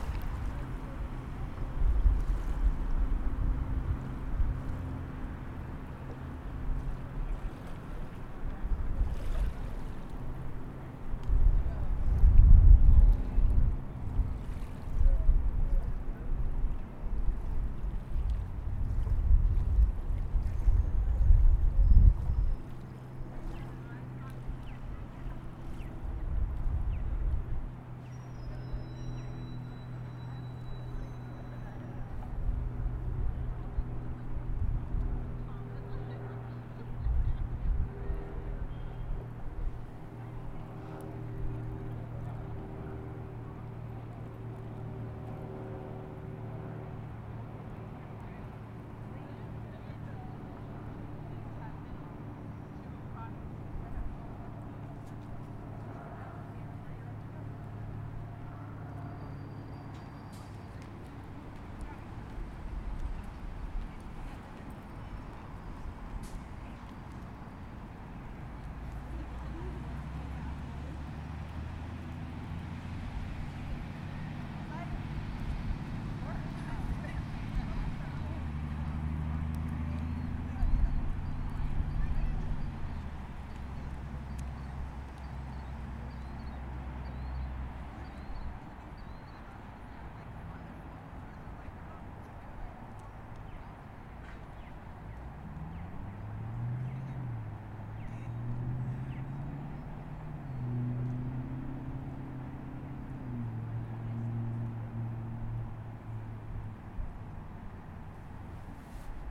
Lake Merritt Amphitheatre, Oakland, CA, USA - Lake Merritt after dusk
heard predominantly is the sound of a rowing crew practicing on the water. The lake, both on the water as well as on the path around it, is a place where many city dwellers exercise at all times of the day. It is also a sanctuary for much wildlife, and provides a safe haven for all in the middle of the busy city.
10 October 2018, 20:00